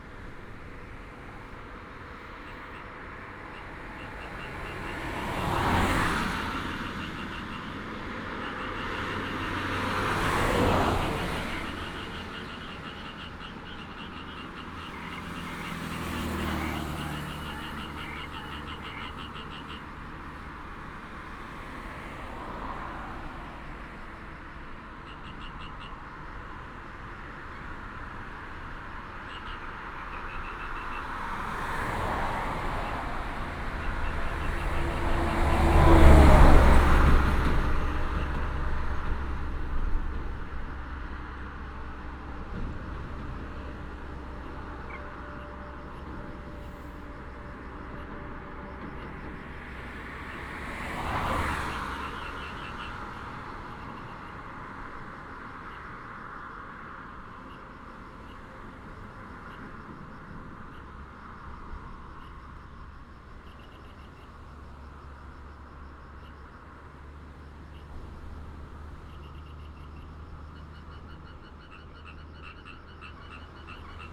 南迴公路421K, Taimali Township - Traffic and Frog sound
Beside the road, Traffic sound, Frog croak, Sound of the waves
Binaural recordings, Sony PCM D100+ Soundman OKM II
Taimali Township, Taitung County, Taiwan, 14 April